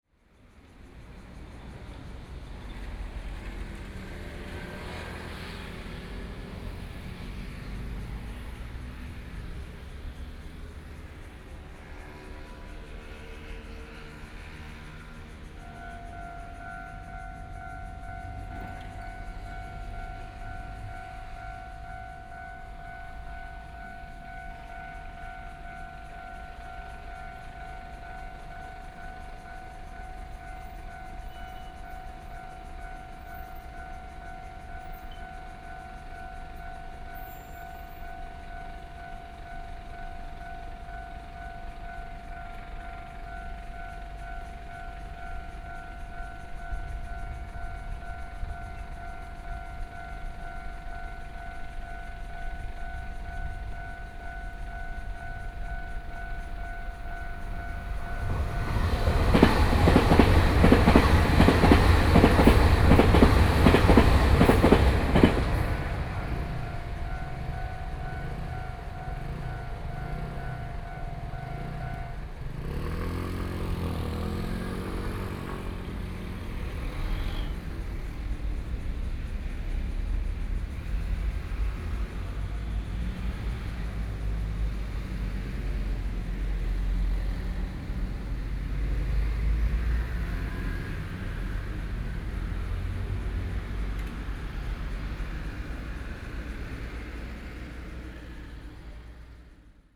In the railway level crossing, Traffic Sound, Train traveling through